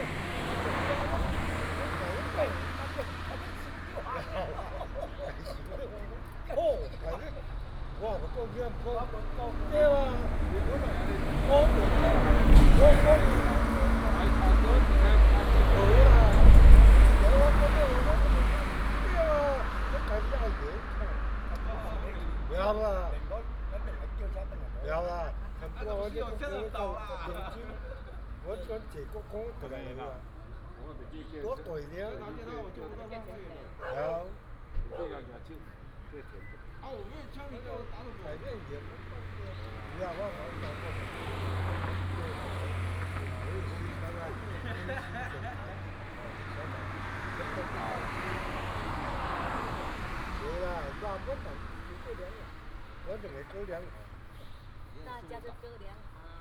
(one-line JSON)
{"title": "Zhishan Rd., Taimali Township - Night outside the store", "date": "2018-04-02 22:01:00", "description": "Night outside the store, Dog barking, traffic sound", "latitude": "22.61", "longitude": "121.01", "altitude": "15", "timezone": "Asia/Taipei"}